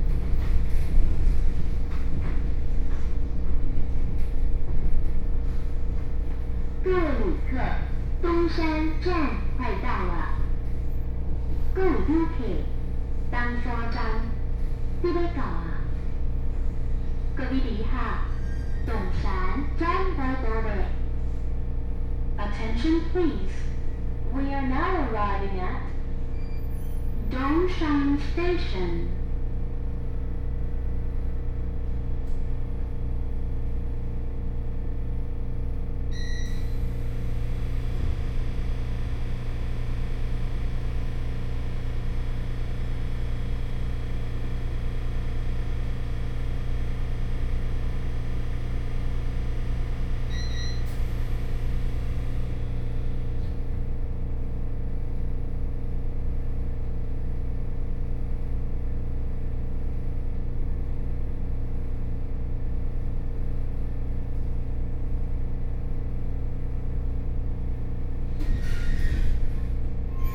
Dongshan Township, Yilan County - Local Train
from Luodong Station to Xinma Station, Zoom H4n+ Soundman OKM II
Dongshan Township, Yilan County, Taiwan